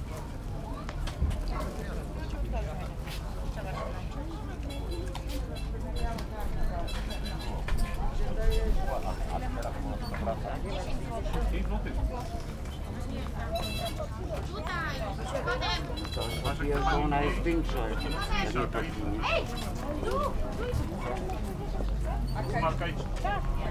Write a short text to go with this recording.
This recording was captured with a Sony PCM-D100 at the city marketplace on a Friday when the usual market trade was taking place.